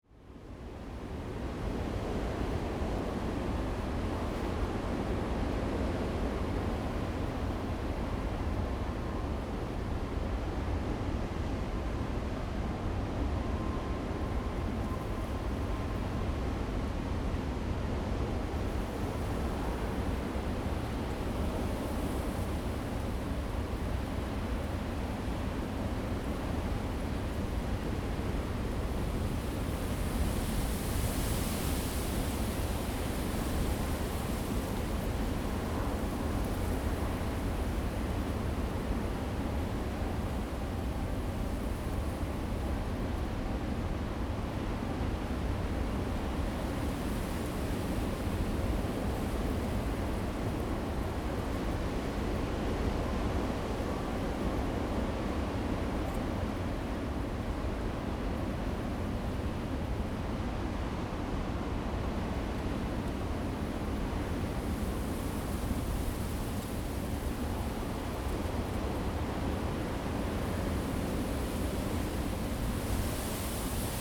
三間村, Changbin Township - sound of the waves
sound of the waves, Great wind and waves
Zoom H2n MS+XY
Changbin Township, 花東海岸公路, 9 October 2014